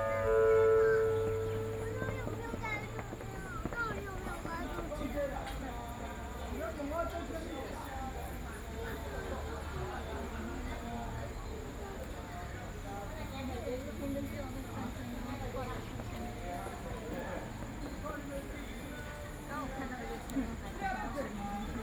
Shihfen Train Station, New Taipei City - On the platform
New Taipei City, Taiwan